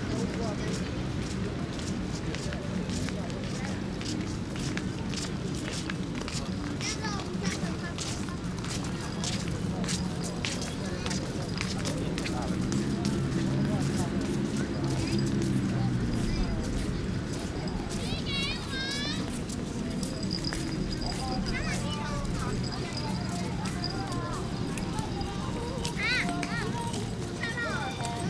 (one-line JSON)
{"title": "仁愛公園, Yonghe Dist., New Taipei City - in the Park", "date": "2011-06-30 21:33:00", "description": "Children, In Park\nSony Hi-MD MZ-RH1 +Sony ECM-MS907", "latitude": "25.01", "longitude": "121.51", "altitude": "8", "timezone": "Asia/Taipei"}